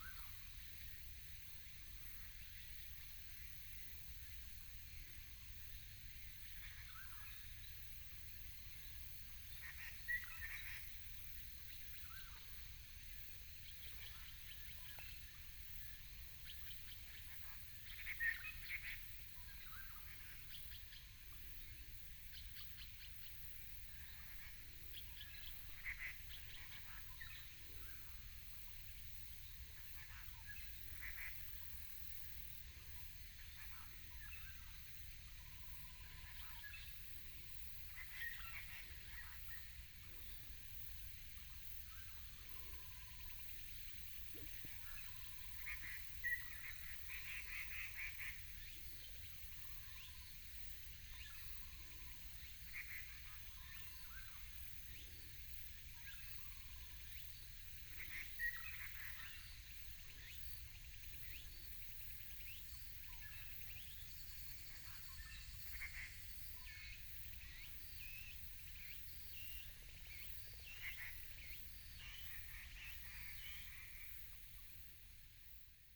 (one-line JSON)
{"title": "竹25鄉道, Guanxi Township, Hsinchu County - A variety of birds call", "date": "2017-09-15 17:18:00", "description": "A variety of birds call, Insects sound, Evening in the mountains, Binaural recordings, Sony PCM D100+ Soundman OKM II", "latitude": "24.76", "longitude": "121.15", "altitude": "338", "timezone": "Asia/Taipei"}